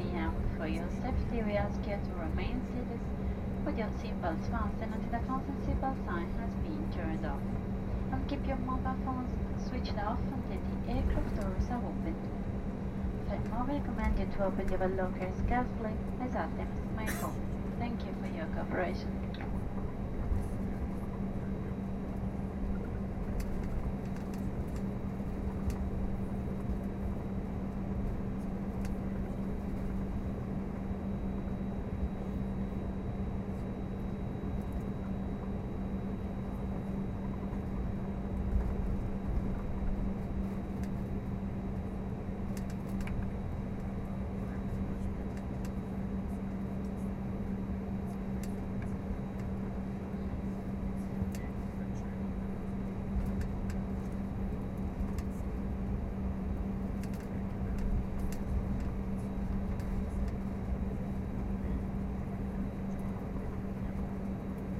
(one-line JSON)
{"title": "track landing (romanlux)", "description": "atterraggio a Milano 1/2/10 h10,50 volo da Palermo (edirolr-09hr)", "latitude": "45.44", "longitude": "9.28", "altitude": "99", "timezone": "Europe/Berlin"}